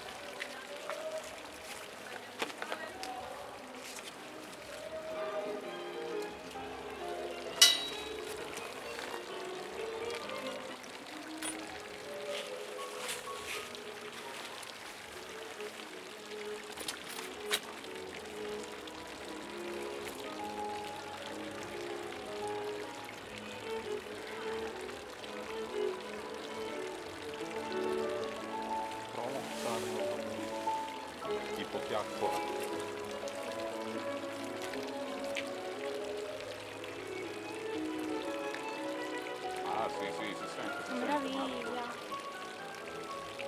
L'Aquila, Santa Maria Paganica - 2017-06-08 07-Pzza S.Maria Paganica